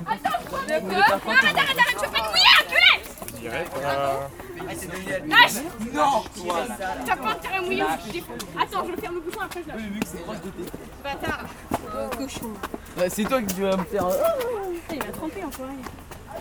Chatou, France - Taking the train in Chatou station
Taking the train in the Chatou station. A group of young students jokes with a bottle of water.